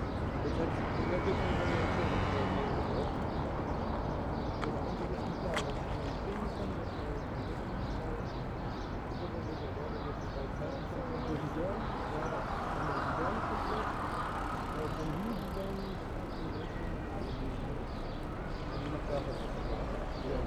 Berlin: Vermessungspunkt Maybachufer / Bürknerstraße - Klangvermessung Kreuzkölln ::: 10.06.2011 ::: 18:31
Berlin, Germany